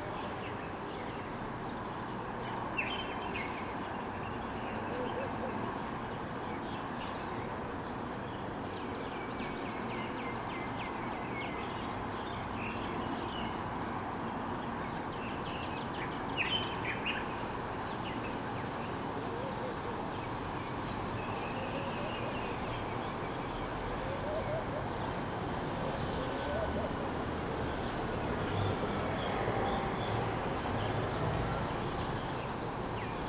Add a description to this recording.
Just another day at Jessy Cohen, Holon, Israel